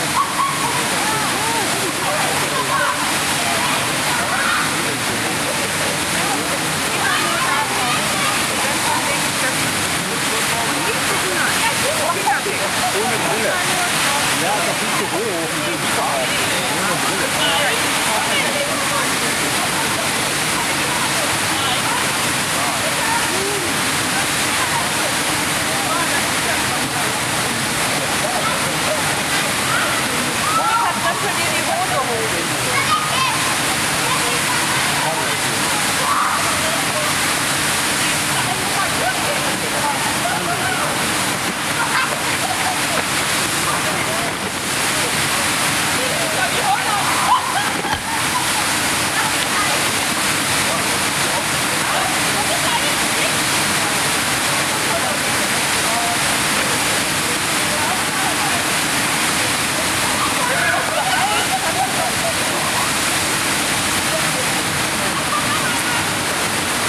Zeche Zollverein, Essen, Deutschland - essen, zeche zollverein, schacht XII, water tower installation
Am Schacht XII - der Klang von Besuchern und einem Wasser Turm - einer temporären Installation von rAndom International zur Ausstellung "urbane Künste" 2013. Eine Aufnahme freundlicherweise für das Projekt Stadtklang//:: Hörorte zur Verfügung gestellt von Hendrik K.G. Sigl
At Schacht XII- the sound of a water tower and visitors - at a temporary installation by rAndom International presented at "urban arts" in 2013
you can watch a video documentation of the object here:
Projekt - Stadtklang//: Hörorte - topographic field recordings and social ambiences